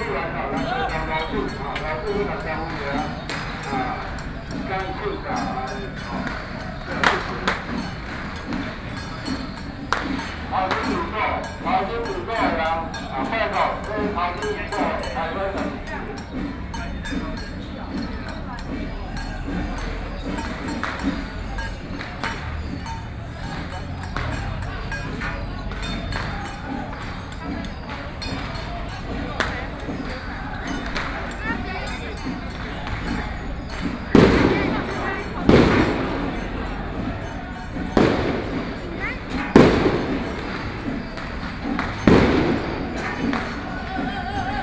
Kangding Rd., Wanhua Dist., Taipei City - Traditional temple festivals
Traditional temple festivals, Binaural recordings, Sony PCM D50 + Soundman OKM II, ( Sound and Taiwan - Taiwan SoundMap project / SoundMap20121115-9 )
15 November 2012, 12:19